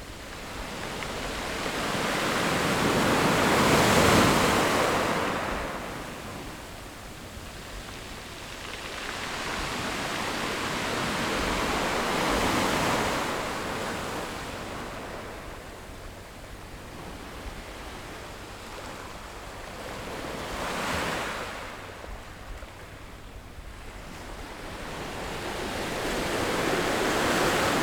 Chenggong Township, Taiwan - sound of the waves
Sound of the waves
Zoom H6 XY+NT4